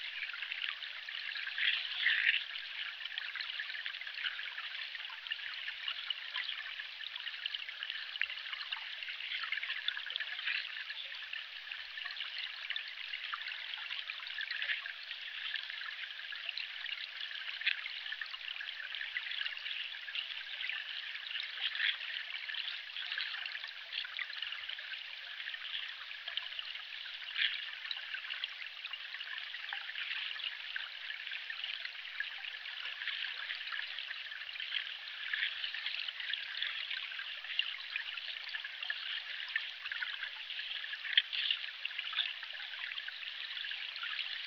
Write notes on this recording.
hydrophone in the murmerring river